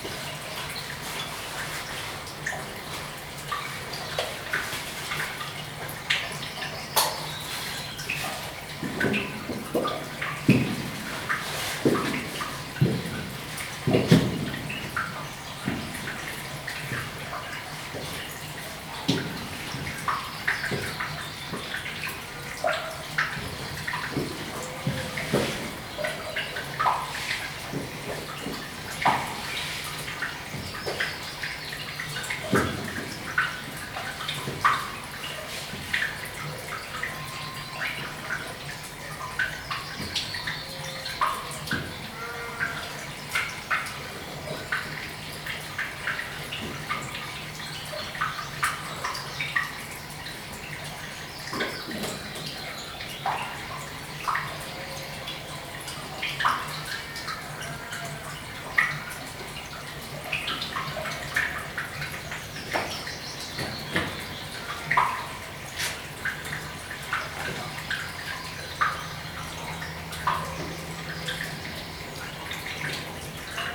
Scoska Cave, Littondale - Scoska Cave
Just a short walk from Arncliffe, Littondale, there's Scoska Cave.